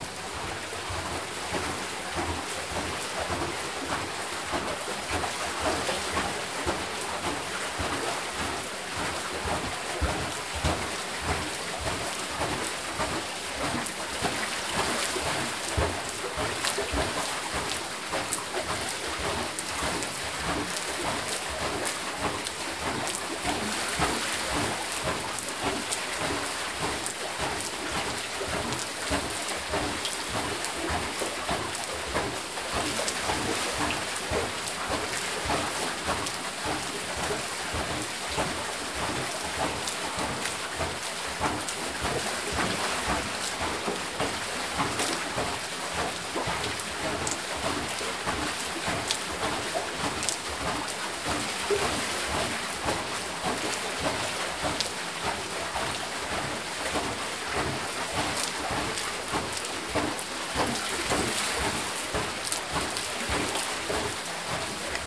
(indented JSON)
{
  "title": "Le Val, France - Moulin à huile",
  "date": "2016-12-07 09:30:00",
  "description": "la roue du moulin à huile a été commandée le 18 septembre 1720 au sieur Buffe\nla roue était actionnée par un canal conduisant les eaux de la source des Trege\nThe wheel of the oil mill was ordered on 18 September 1720 to Sieur Buffe\nThe wheel was operated by a canal leading the waters of the Trege source",
  "latitude": "43.44",
  "longitude": "6.07",
  "altitude": "240",
  "timezone": "GMT+1"
}